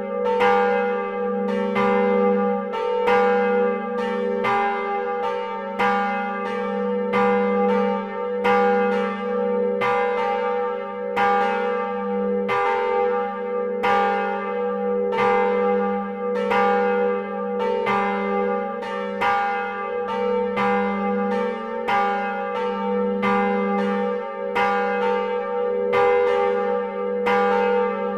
Mont-Saint-Guibert, Belgique - The bells
The two bells of the church, recorded inside the tower. These two bells are mediocre, the bellfounder made only these two ones.
Mont-Saint-Guibert, Belgium, 31 October